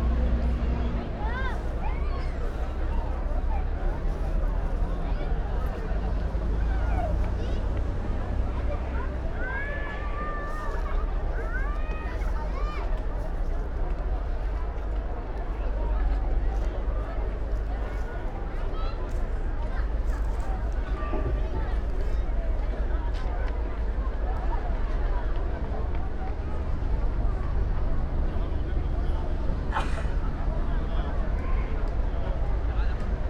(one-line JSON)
{"title": "Šentilj, Spielfeld, Slovenia - exodus", "date": "2015-10-24 14:42:00", "description": "people after their long paths and many borders, again waiting to continue north\nafter many years of quiet, forgotten checkpoint territory, old border crossing is filled with refugees, police and army, area transformed into huge guarded camp, border exists again ...", "latitude": "46.69", "longitude": "15.65", "altitude": "296", "timezone": "Europe/Ljubljana"}